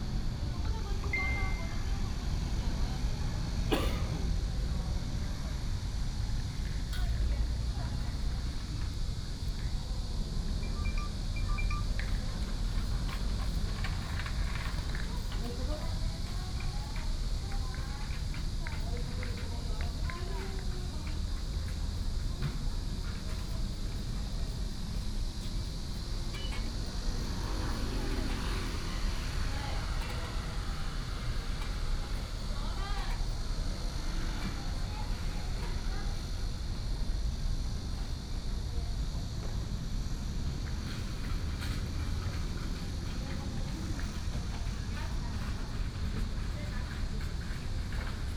At the entrance to the mall, Cicadas, Traffic sound, trolley, Phone sound